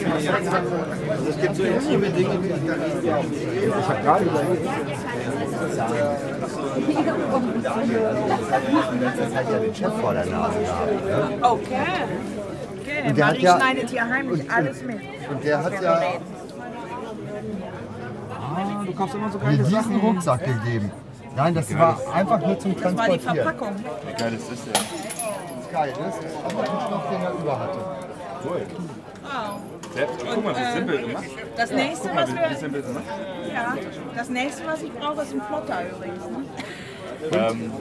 Linden-Nord, Hannover, Deutschland - Nachtleben auf der Limmerstraße
Nachtleben auf der Limmerstraße in Hannover Linden-Nord, aufgenommen von Hörspiel Ad Hoc, Situation: Leute feiern und genießen die Sommernacht, Jemand hat Geburtstag, ein Straßenmusiker taucht auf und spielt ein Ständchen, Aufnahmetechnik: Zoom H4n